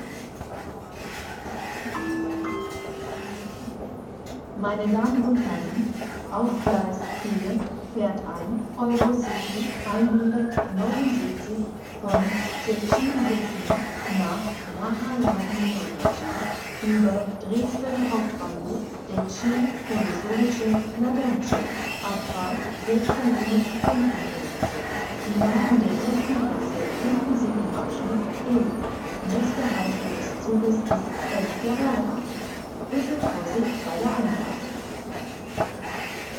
berlin südkreuz, elevators and escalators - escalator ground floor, train announcement